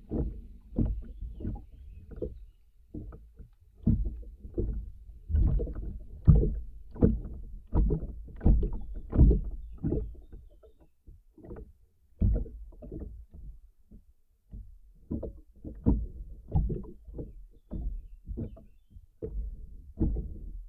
Šlavantai, Lithuania - A boat swaying in the water
Dual contact microphone recording of a wooden boat being gently swayed by the water. Some environment sounds - wind, birds chirping - also come through a bit in the recording, resonating through the boat surface.
Lazdijų rajono savivaldybė, Alytaus apskritis, Lietuva, 28 June, 11:30